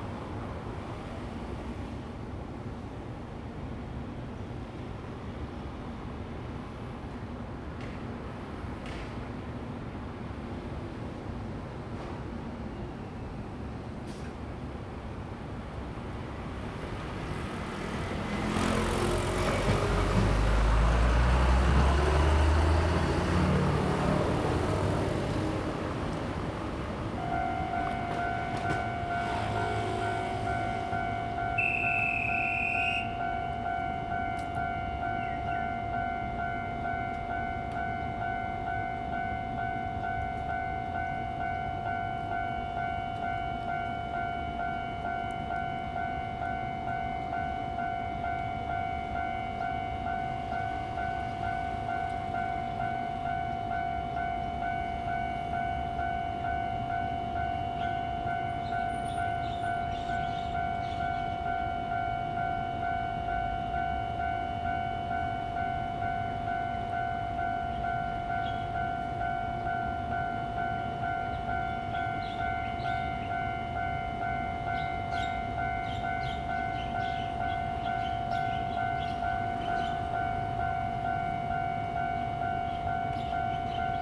Next to the railroad track, Cicada and Traffic sound, The train runs through
Zoom H2n MS+XY
Taoyuan City, Taiwan, 27 July 2017, 09:46